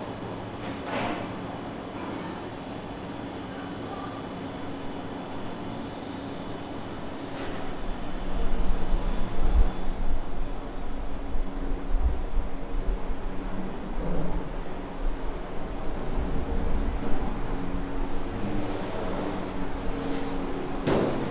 Shuk ha-Katsavim St, Jerusalem - Suq
The Suq in the Old City of Jerusalem. Early in the morning, most of the stores are still closed but a few merchants have already started working.
מחוז ירושלים, מדינת ישראל, 20 March, 08:30